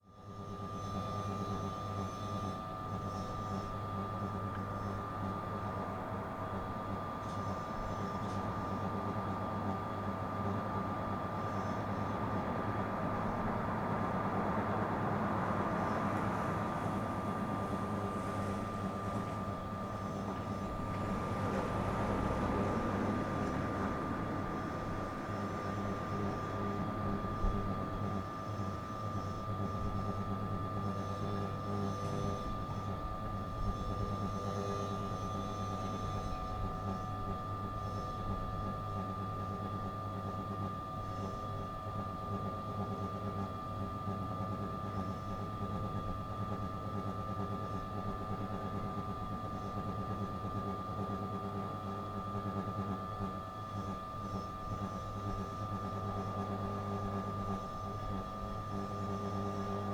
Köln, Richmondstr. / Breitestr. - rotating signpost
signpost with rotating advertising and clock on top. interesting sounds coming out of a little hole in the post. quiet street at midnight, sundday night.
Cologne, Germany, 30 January 2011